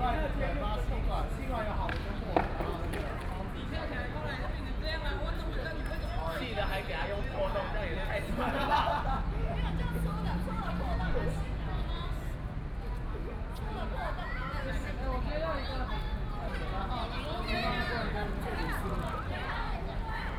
Taipei Cinema Park - Plaza
Students and people on the square, Chatting and rest of the public, Group of young people are practicing skateboard and dance, Binaural recordings, Sony PCM D50 + Soundman OKM II